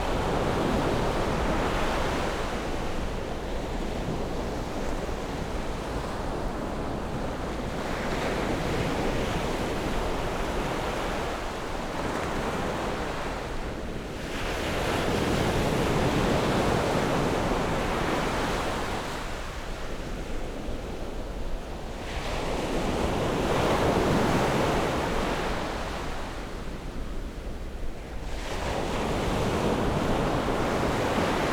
台南市南區喜南里, Taiwan - Sound of the waves
On the beach, Sound of the waves
Zoom H6 +Rode NT4
Tainan City, Taiwan, 18 February 2017